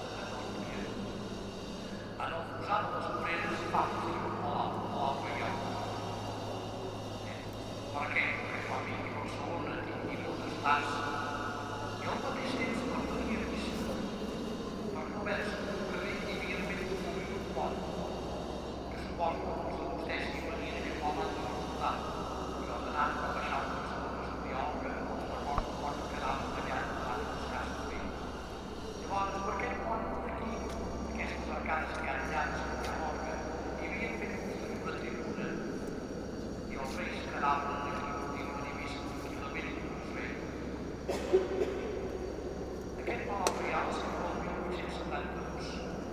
Santa María del Mar
Inside the Catherdral. A man explaining the meaning and history with a microphone. Tourists all over the space.